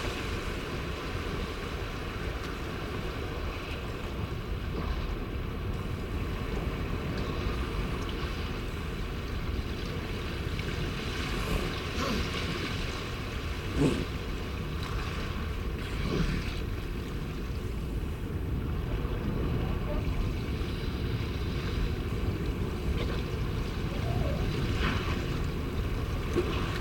Mexico - Elephant seals ...
San Benitos Oeste Island ... Isla San Benito ... elephant seals mothers and pups loafing on a rocky outcrop ... breaks and handling noises ... Telinga ProDAT 5 to Sony Minidisk ... sunny warm clear morning ... peregrine calls at end ...
28 March, 10:30